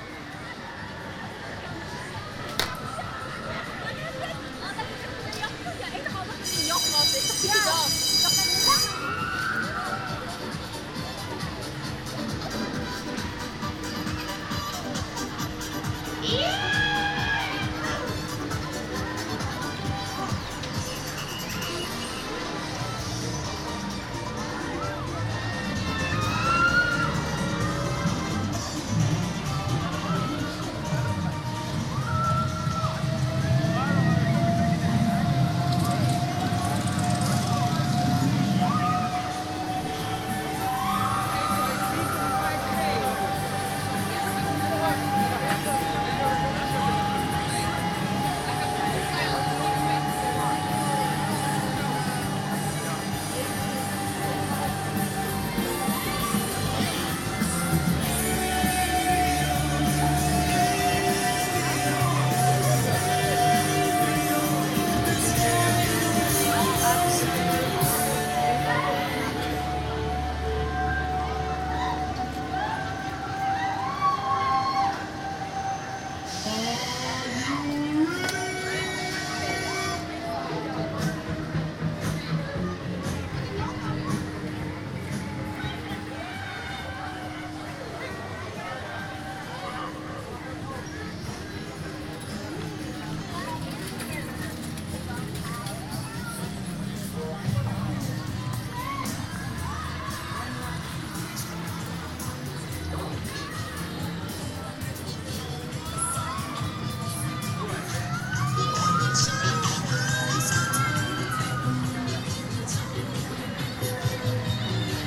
Voorhout, Den Haag, Nederland - Koninginnekermis
Koninginnekermis, Den Haag. The 'Queens fair', an annual fair that takes place around Queens day (April 30th)
Zuid-Holland, Nederland, European Union, April 2011